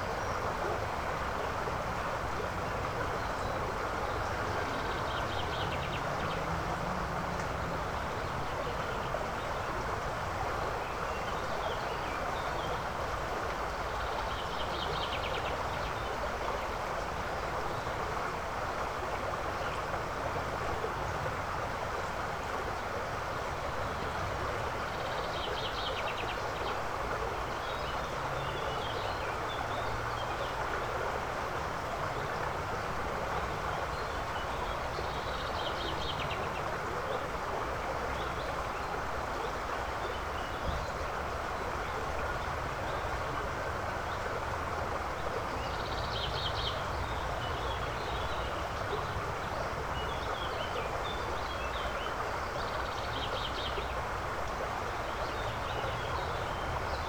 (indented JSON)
{
  "title": "altenberg, märchenwaldweg: eifgenbach - the city, the country & me: eifgen creek",
  "date": "2011-05-06 17:16:00",
  "description": "the city, the country & me: may 6, 2011",
  "latitude": "51.06",
  "longitude": "7.13",
  "altitude": "109",
  "timezone": "Europe/Berlin"
}